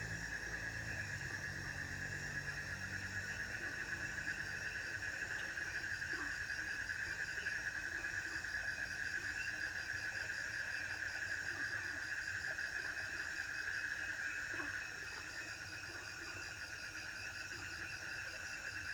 桃米巷, 桃米里 - Frogs sound
Ecological pool, Frogs chirping
Zoom H2n MS+XY
Puli Township, 桃米巷16號, 17 May 2016